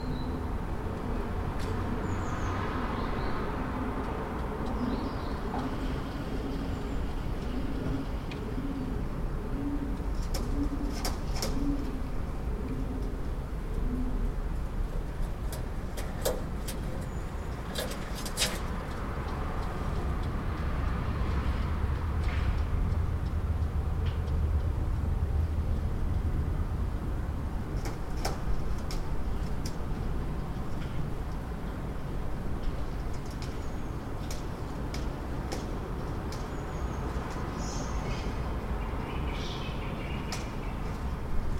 inner yard with pigeons and train
5 February 2011, 06:26